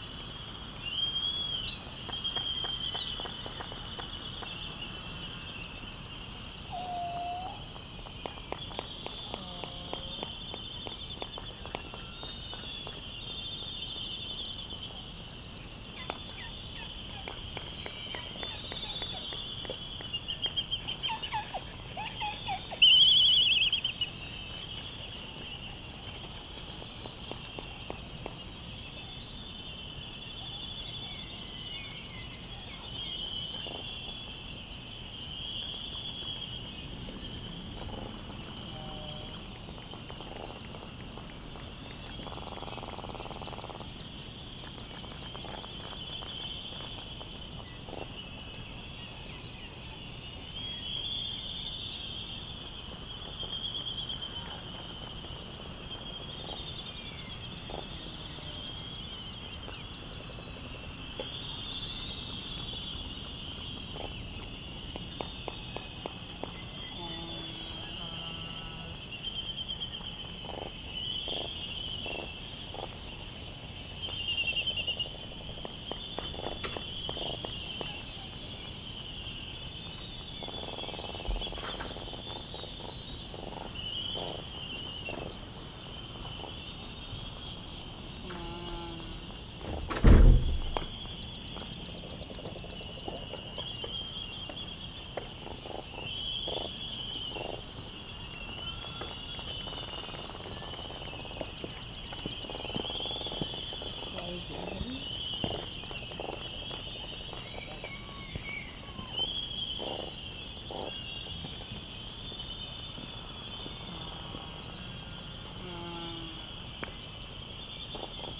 United States Minor Outlying Islands - Laysan albatross soundscape ...
Laysan albatross soundscape ... Sand Island ... Midway Atoll ... laysan albatross calls and bill clappers ... white terns ... canaries ... black noddy ... open lavaliers either side of a fur covered table tennis bat used as a baffle ... background noise ... wind thru iron wood trees ... voices ... doors banging ...